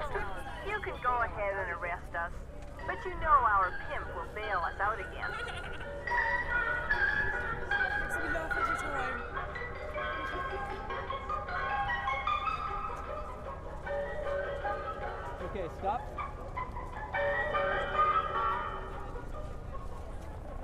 bella centre copenhagen
arrest, filistine, COP15